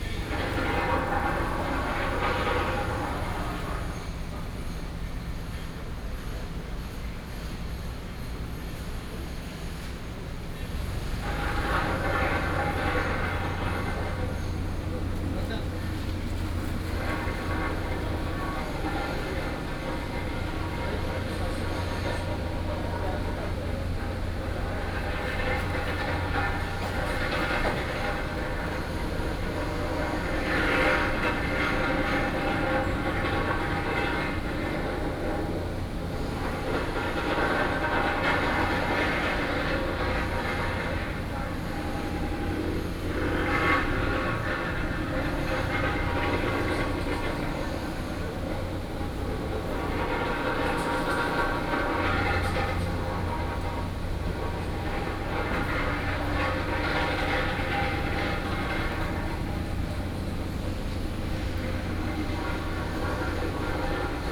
Ln., Sec., Xinyi Rd., Da’an Dist., Taipei City - next to the restaurant
Standing next to the restaurant, Road construction Sound